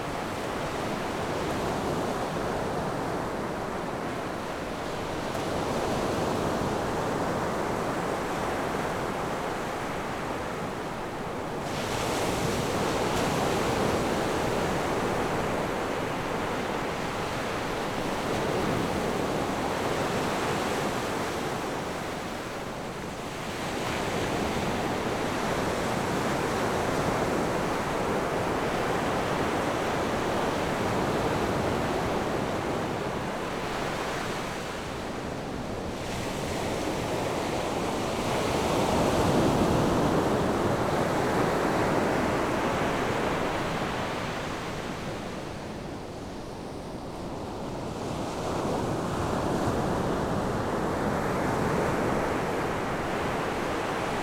At the beach, Sound of the waves
Zoom H6 +RodeNT4
福建省, Mainland - Taiwan Border, 15 October 2014